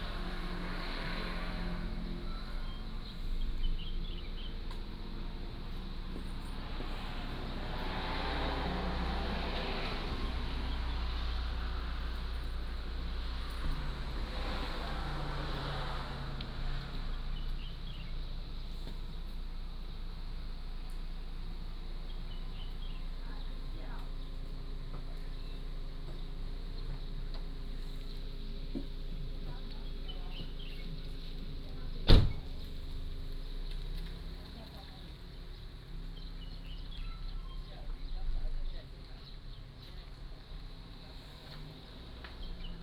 樂合里, Yuli Township - In front of the convenience store
In front of the convenience store, Birds singing, Traffic Sound
Hualien County, Taiwan, 9 October, ~07:00